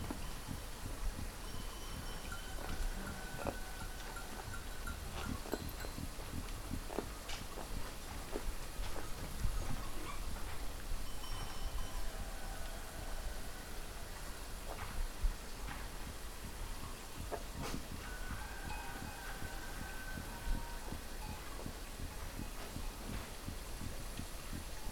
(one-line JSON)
{"title": "Crete, Samaria Gorge - sheep afternoon", "date": "2012-09-29 15:22:00", "description": "a herd of sheep grazing in the scorching afternoon", "latitude": "35.24", "longitude": "23.97", "altitude": "55", "timezone": "Europe/Athens"}